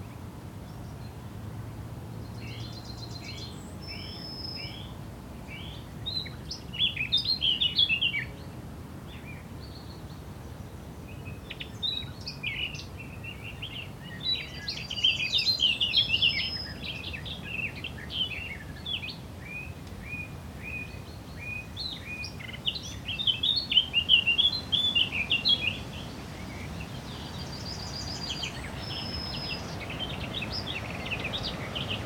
Near Münsing - birds in a grove, distant traffic. [I used the Hi-MD recorder Sony MZ-NH900 with external microphone Beyerdynamic MCE 82]